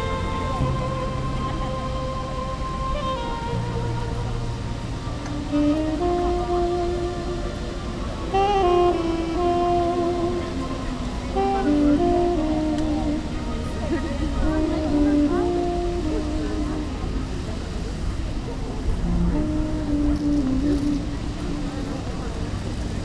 Brandenburger Tor, Berlin - one of the ways